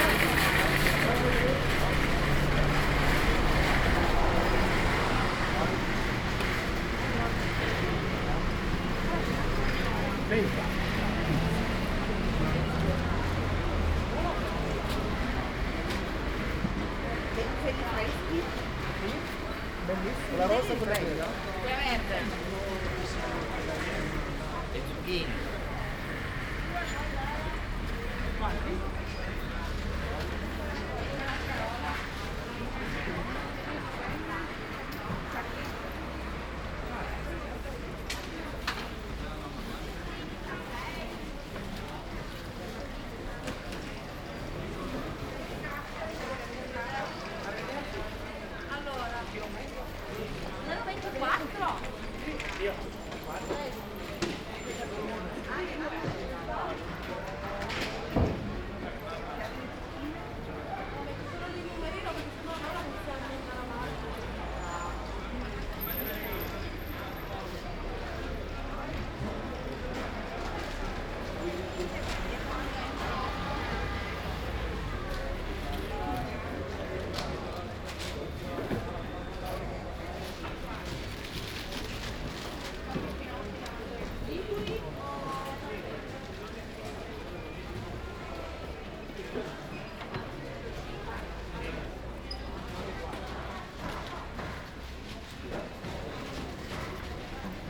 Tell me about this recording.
"Jour du Printemps au marché aux temps du COVID19" Soundwalk, Saturday March 21th 2020. First Spring day at the Piazza Madama Cristina open market at San Salvario district, Turin. Eleven days after emergency disposition due to the epidemic of COVID19. Start at 10:12 a.m. end at 10:41 a.m. duration of recording 29’49”, The entire path is associated with a synchronized GPS track recorded in the (kmz, kml, gpx) files downloadable here: